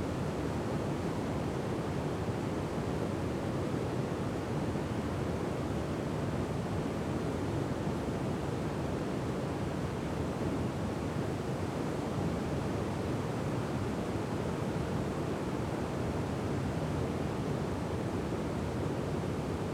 Willow River State Park - Top of Dam
Recorded from the top of the new dam at Willow River State Park
Saint Croix County, Wisconsin, United States